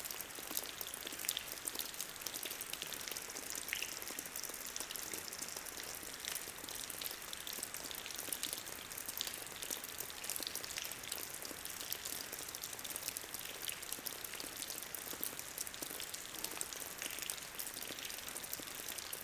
Vix, France - Strong rain during a sad day
Into the small Vix village, rain is falling hardly. During an hiking, we are waiting since two hours this constant and strong rain stops. We are protected in a old providential wash-house.